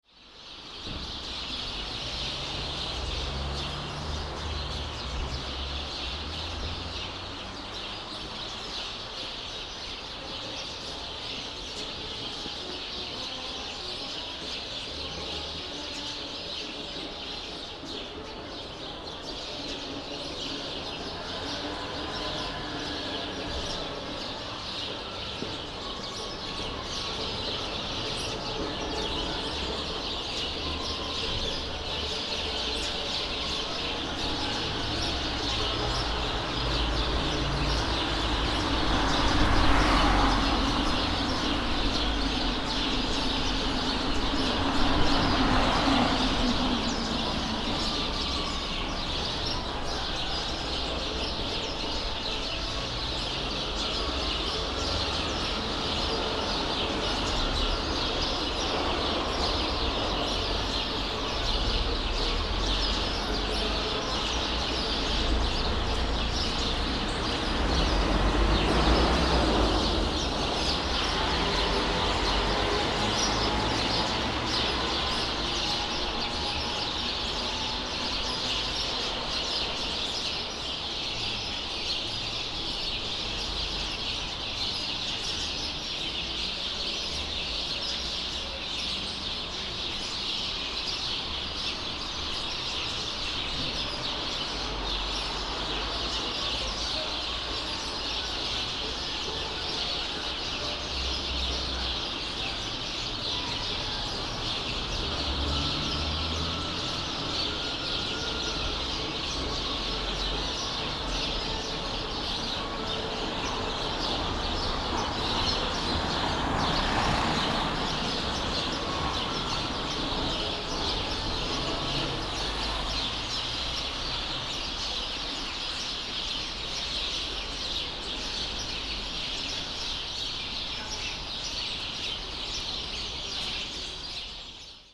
clamorous birds in tree, music from nearby market